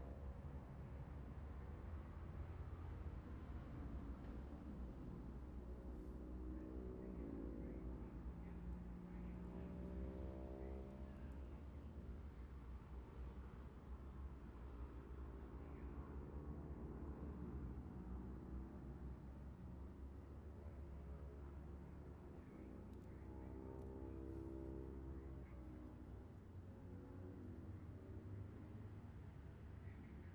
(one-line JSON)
{"title": "Jacksons Ln, Scarborough, UK - Gold Cup 2020 ...", "date": "2020-09-11 14:16:00", "description": "Gold Cup 2020 ... Twins qualifying ... Monument Out ...", "latitude": "54.27", "longitude": "-0.41", "altitude": "144", "timezone": "Europe/London"}